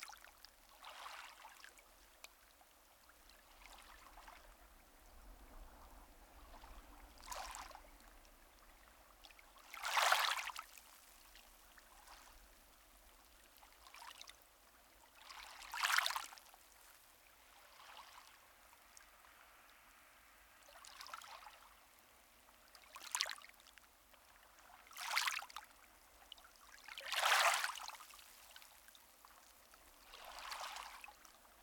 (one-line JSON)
{"title": "Newton Stewart, UK - Portyerrock Bay, near Isle of Whithorn, 24 August 2021", "date": "2021-08-24 14:00:00", "description": "It was a very sunny and warm day when I recorded this, and I actually got sunburn from sitting out for too long. This section of coast is very sheltered, and there isn't much of a beach so the waves, such as they are, just lap against the shore. It's very peaceful, and traffic using the road in this area is minimal. The area is surrounded by farm land, and towards the end of the track you can hear a sort of breathing and rustling sound from the left side. This is a cow that had wandered over to say hello, and started eating the grass nearby. Recorded 24 August 2021, using the Sony PCM D100 and Audio Technica AT8022 stereo microphone.", "latitude": "54.72", "longitude": "-4.36", "altitude": "8", "timezone": "Europe/London"}